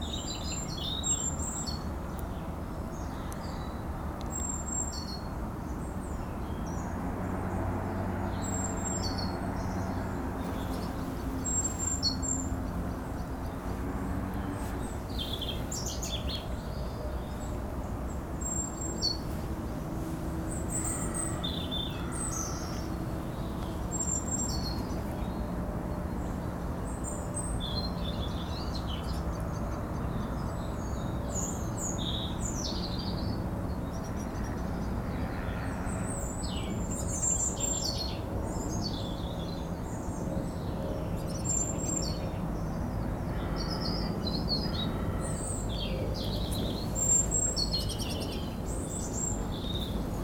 A blackbird and a tit are singing. At the end, a plane is doing big noise in the sky.